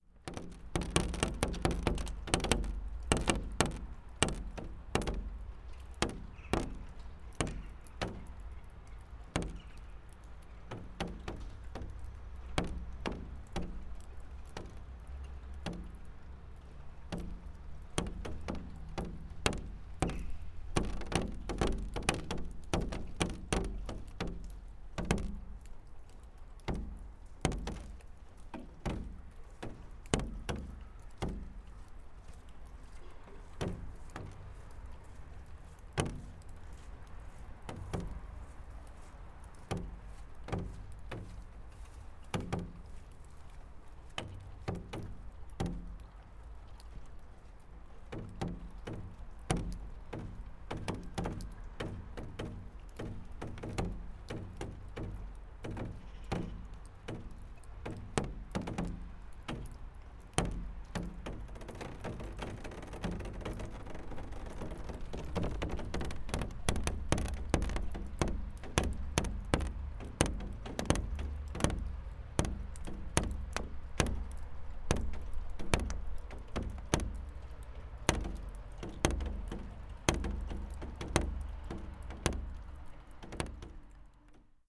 Piatkowo District, Poznan
melting snow dripping on plastic roof